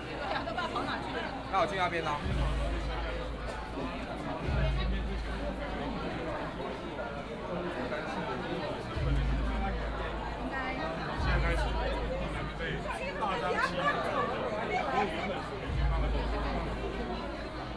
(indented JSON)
{
  "title": "拱天宮, 苗栗縣通霄鎮 - people crowded in the alley",
  "date": "2017-03-09 13:20:00",
  "description": "In the temple, people crowded in the alley",
  "latitude": "24.57",
  "longitude": "120.71",
  "altitude": "7",
  "timezone": "Asia/Taipei"
}